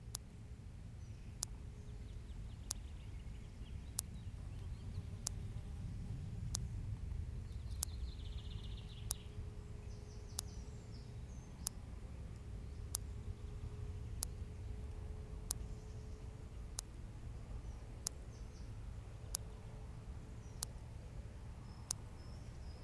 Saint-Martin-de-Nigelles, France - Electric fence
Closing a big pasture with horses, an electric fence makes tic tic tic.